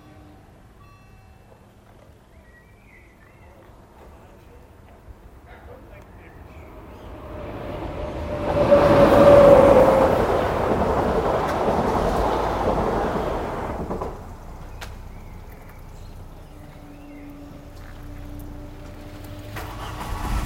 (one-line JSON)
{"title": "essen, train passing - essen, railroad crossing", "description": "train passing, cars start.\nrecorded june 21st, 2008.\nproject: \"hasenbrot - a private sound diary\"", "latitude": "51.44", "longitude": "7.12", "altitude": "86", "timezone": "GMT+1"}